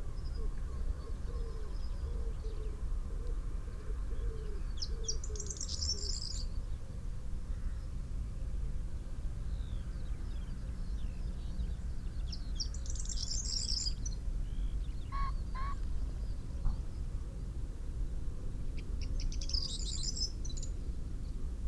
Green Ln, Malton, UK - corn bunting ... song ...
corn bunting ... song ... xkr SASS to Zoom H5 ... bird call ... song ... from pheasant ... dunnock ... chaffinch ... crow ... wood pigeon ... skylark ... taken from unattended extended unedited recording ...
14 April 2021, 08:02